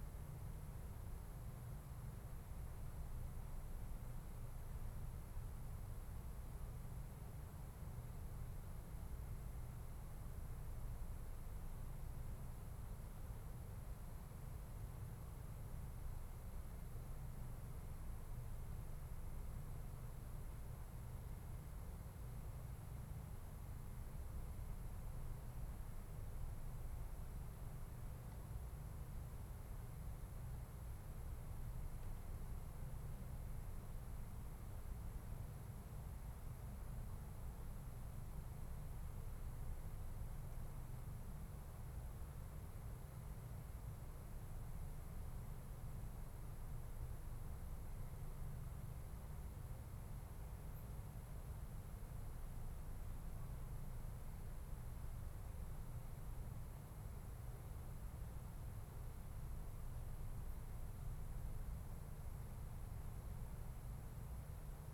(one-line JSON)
{"title": "Ascolto il tuo cuore, città. I listen to your heart, city. Several chapters **SCROLL DOWN FOR ALL RECORDINGS** - Easter Stille Nacht 433 in the time of COVID19 Soundscape", "date": "2020-04-13 03:34:00", "description": "\"Easter Stille Nacht 4'33\" in the time of COVID19\" Soundscape\nChapter LXIII of Ascolto il tuo cuore, città. I listen to your heart, city\nMonday April 13th 2020. Fixed position on an internal terrace at San Salvario district Turin, thirty four days after emergency disposition due to the epidemic of COVID19.\nStart at 3:34 a.m. end at 3:39 a.m. duration of recording 4’33”", "latitude": "45.06", "longitude": "7.69", "altitude": "245", "timezone": "Europe/Rome"}